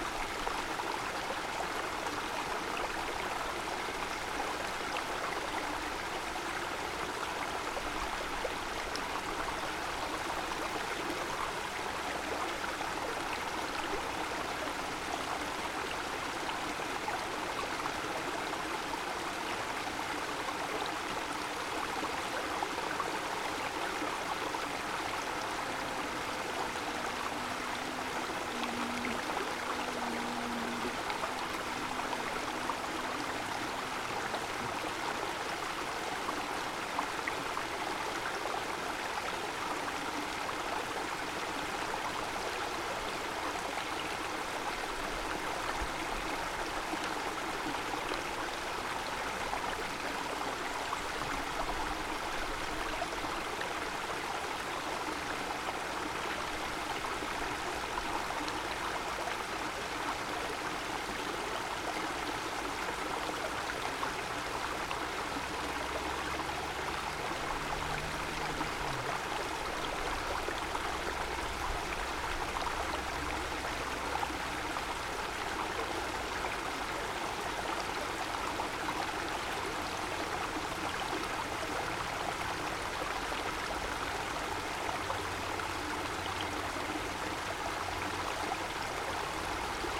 C. Segunda, Real Sitio de San Ildefonso, Segovia, España - Cascada del Arroyo de la Chorranca
Sonidos del Arroyo de la Chorranca en Valsaín. El arroyo pasa por una zona rocosa en donde con el paso del tiempo, el agua ha ido esculpiendo las rocas formando unas pequeñas pocitas y cascadas a su bajada, se llega adentrándose un poco fuera de la senda entre los pinares de Valsaín. Se sitúa muy cerca de una ruta llamada Sendero de los Reales Sitios creada en el siglo XVIII por el rey Carlos III. Esta ruta llega hasta el Palacio de la Granja de San Idelfonso. Toda la zona es muy natural y preciosa. Al caer el sol... grababa lo que escuchaban mis oídos...
Castilla y León, España